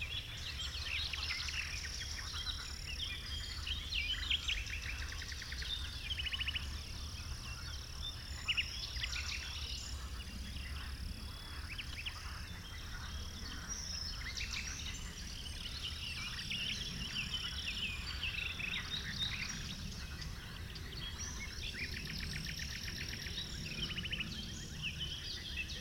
Chindrieux, France - Forêt foisonnante
La vie foisonnante de la forêt de Chautagne, oiseaux, grenouilles, insectes le matin.